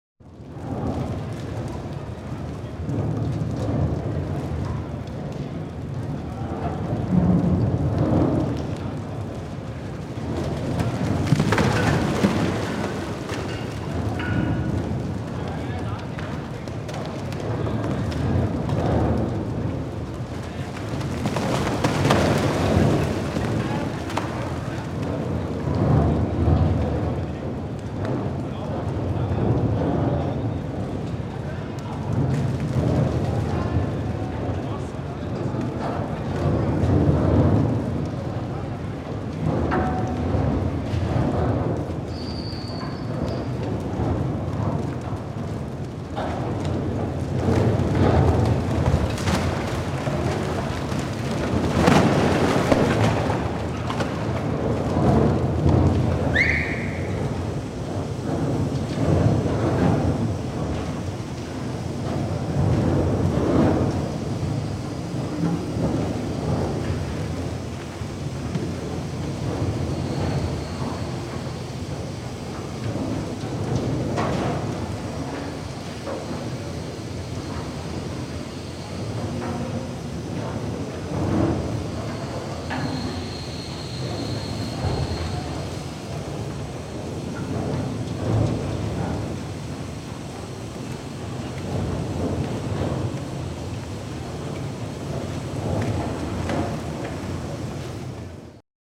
Bauabschnitt Antoniusschacht
Kurz vor dem Durchstich. Wir hören das malmen der Teilschnittmaschiene.
1987